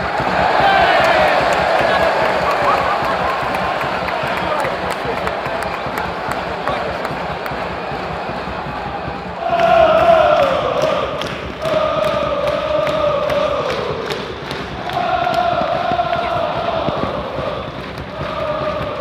Selhurst Park - Crystal Palace FC - Crystal Palace Vs Man City crowd
Recorded at an English Premier League match between Crystal Palace and Man City. with 26,000 fans at Selhurst Park, the recording starts with a minutes silence in remembrance of local community members killed in a tram crash the week before. The recording then captures the atmosphere within Selhurst Park at various stages of the game. The ground is known in the EPL as being one of the most atmospheric, despite the limited capacity of only around 26,000. For the record Palace lost 2-1 with both Man City goals scored by Yaya Toure, in his first game back after being dropped by Pep Guardiola for comments from his agent several months beforehand.
London, UK, 19 November 2016, 15:00